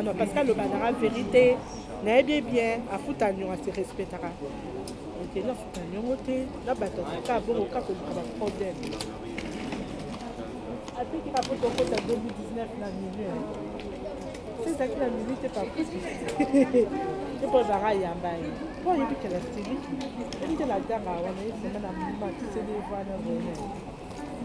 Chartres, France - Chartres station
Chartres station - Several trains make their take in and off on the adjacent platforms 2B and 6 (do not try to understand). I recorded 3 trains. First, a train incoming Chartres station, terminus. After, it's a diesel engine connecting Brou and Courtalain villages. The locomotive makes a terrible noise. Then a conventional TER train ensures the connection between Chartres and Paris-Montparnasse stations. Along the platform, lively conversations take place.
0:00 - 3:00 - The quiet waiting room of the station.
3:00 - 4:10 - The main hall of the station.
4:10 - 5:30 - Incoming train from Le Mans city.
5:28 - PAPA !!!!!
7:30 - 10:45 - Outgoing train to Courtalain village.
10:45 to the end - Outgoing train to Paris.
16:38 - AYOU !